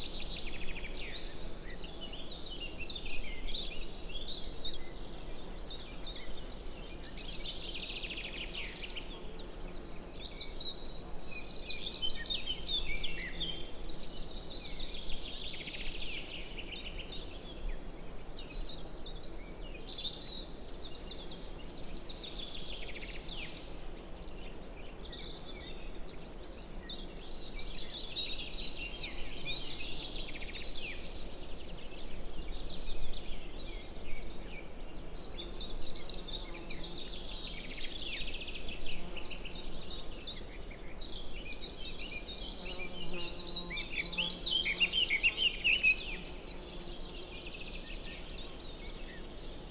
Unnamed Road, Slovakia - Mountain Meadow in High Tatras
Mountain meadow in summer. Surrounded by coniferous forest, altitude approx. 1400 meters.